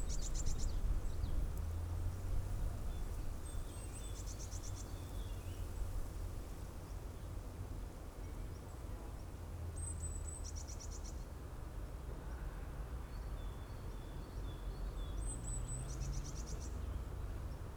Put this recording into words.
Sunday afternoon, ideling in the sun, on a pile of wood, at the nothern most edge of the city, listening to the ambience, (Sony PCM D50, DPA4060)